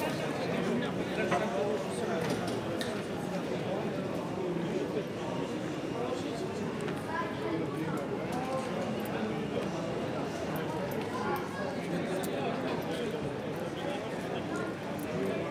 Noailles, Marseille, Frankreich - Marseille, Rue de Feuillants - Improvised street market
Marseille, Rue de Feuillants - Improvised street market.
[Hi-MD-recorder Sony MZ-NH900, Beyerdynamic MCE 82]